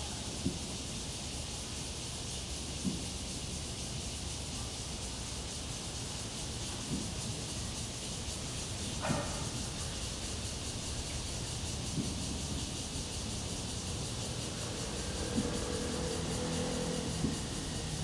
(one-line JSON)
{"title": "新龍公園, Da'an District, Taipei City - Cicadas and Birds sound", "date": "2015-06-28 18:43:00", "description": "in the Park, Cicadas cry, Bird calls, Traffic Sound\nZoom H2n MS+XY", "latitude": "25.03", "longitude": "121.54", "altitude": "19", "timezone": "Asia/Taipei"}